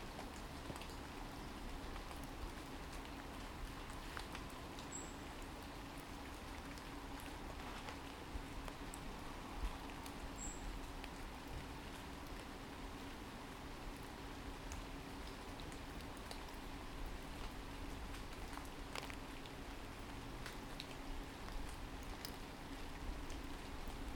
Starbeck Ave, Newcastle upon Tyne, UK - Rain, birdsong, Starbeck Avenue
Walking Festival of Sound
13 October 2019
Rain and quiet birdsong.
England, United Kingdom